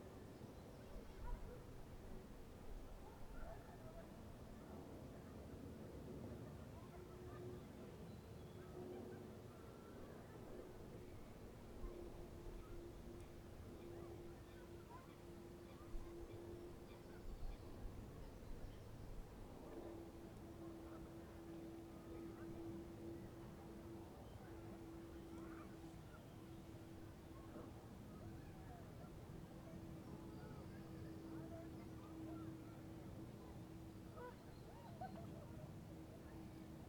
La Courneuve, France - Espaces Calmes - Parc Départemental Georges-Valbon